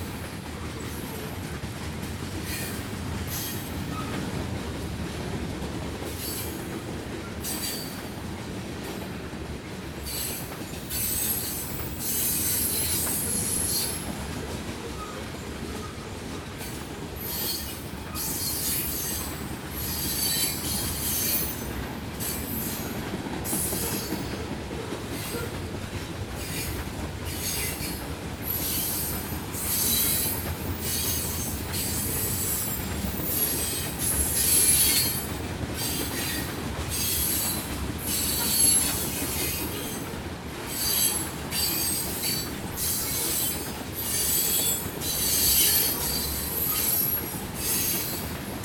Train passing through Bluffton, IN 46714, USA
Indiana, USA